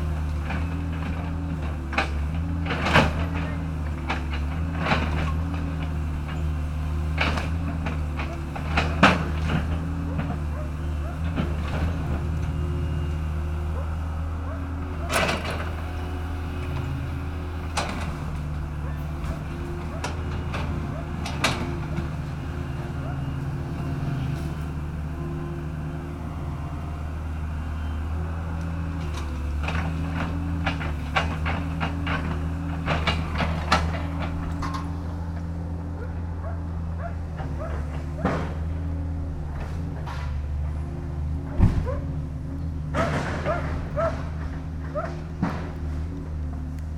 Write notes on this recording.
demolition of Shirleys house, abandoned since 2005, burnt out 2010, my dogs, Sophie and Shirley barking